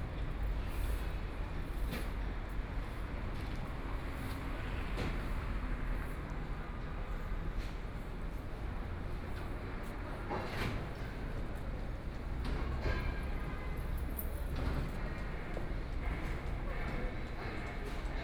Tianjin Road, Shanghai - Walking on the street
Walking on the street, Binaural recording, Zoom H6+ Soundman OKM II